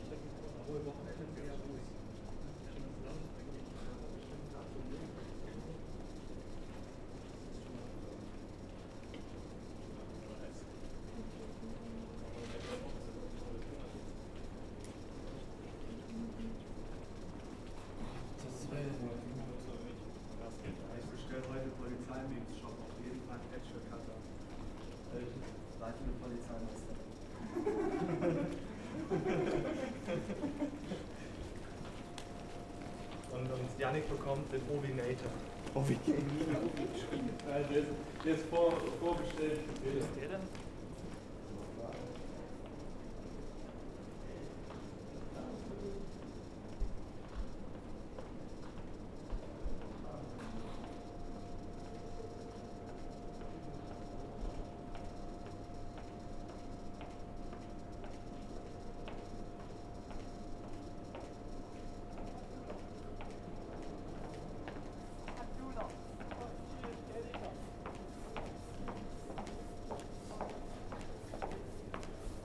Passage Airport to Trainstation, Frankfurt am Main, Deutschland - Corona Anouncement
Another recording of this aisle, now some people are passing, some policeman passes by talking (what is he doing there, meaning me with the recording device), again the anouncement is made that people should not be in masses - which would have been a good reminder at the main station at this day but not here, in this very empty hall...